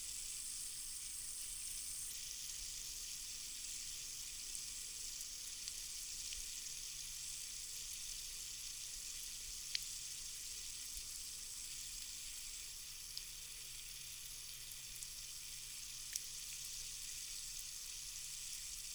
water leaking from borehole ... supplies to an irrigation system ... dpa 4060s in parabolic to mixpre3 ... spraying a potato crop ...
England, United Kingdom, 22 July 2022